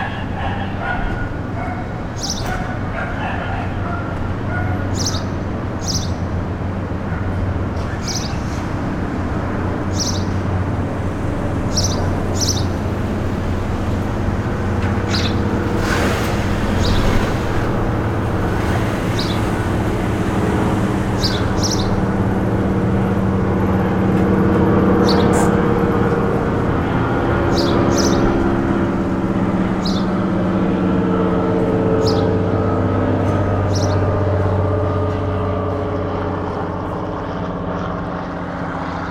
Laneway-1965 Main Street, VIVO Media Arts Centre
Dogs barking, birds, occasional traffic